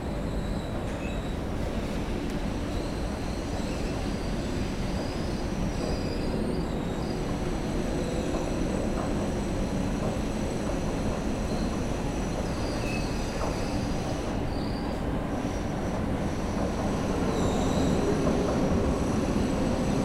Am Hauptbahnhof Ebene A // gegenüber Gleis, Frankfurt am Main, Deutschland - S-Bahn in Corona Times
While a week ago there were constant anouncements (that I did not record unfortunately) to keep the distance because of the corona virus, at this wednesday this was totally absent. Recorded with Tascam DR-44-WL.
Hessen, Deutschland, 15 April 2020, 15:10